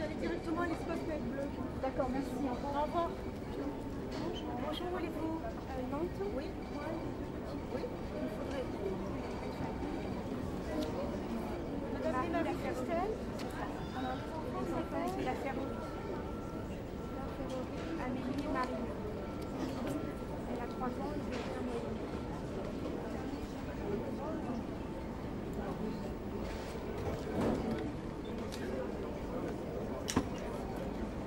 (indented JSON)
{
  "title": "hall 4 aeroport de marignane marseille",
  "latitude": "43.45",
  "longitude": "5.22",
  "altitude": "6",
  "timezone": "Europe/Berlin"
}